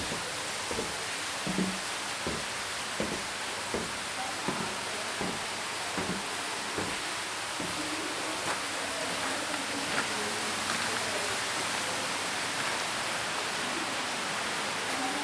Hanuschgasse, Wien, Österreich - Schmetterlinghaus
visit of the Butterfly Greenhouse, Burggarten, Vienna.